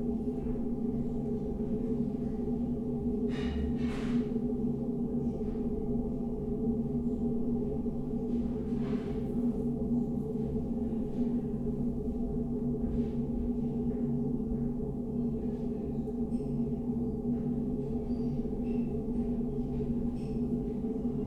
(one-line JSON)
{"title": "ringo - toilet ventilation", "date": "2014-11-22 14:05:00", "description": "ventilation at the men's toilet, Ringo Cafe, a familiar sound, disappearing soon.\n(Sony PCM D50, DPA4060)", "latitude": "52.49", "longitude": "13.42", "altitude": "45", "timezone": "Europe/Berlin"}